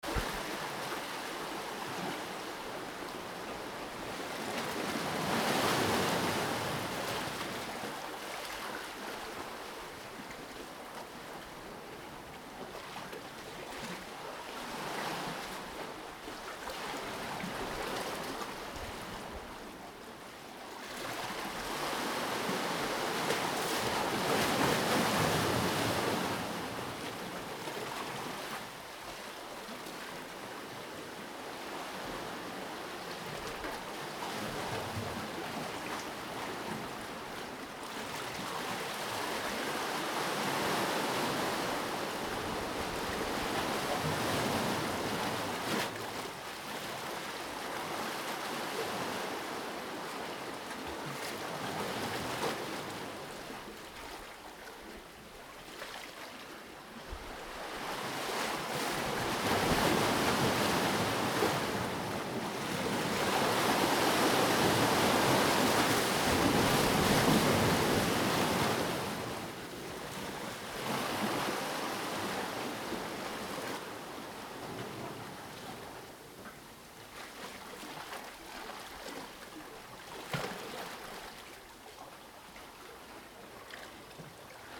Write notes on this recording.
Next to the port wall are hundreds of huge concrete blocks which are designed to break up the waves. You can climb around these blocks and get right next to the water, and that is where the recording was taken. ZOOM H1